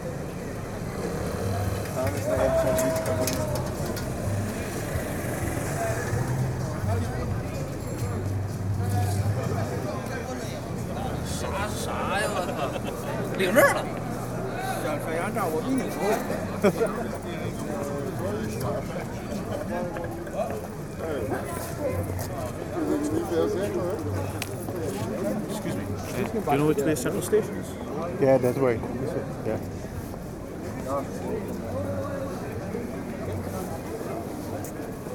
Oude Kerk, Amsterdam - Oude Kerk “Hey Ronnie, is that the Dam Square?”, Amsterdam